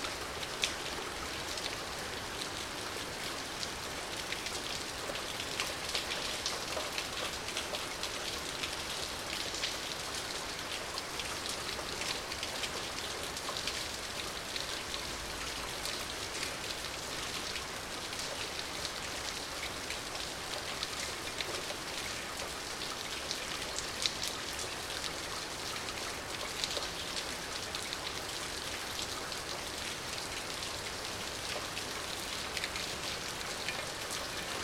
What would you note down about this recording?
Un día lluvioso en uno de los días de confinamiento por COVID 19, puede que la lluvia mas solitaria en mucho tiempo... Captura de sonido con grabadora ZOOM h1n, (A rainy day on one of the days of confinement for COVID 19, may be the loneliest rain in a long time...)Sound capture with ZOOM h1n recorder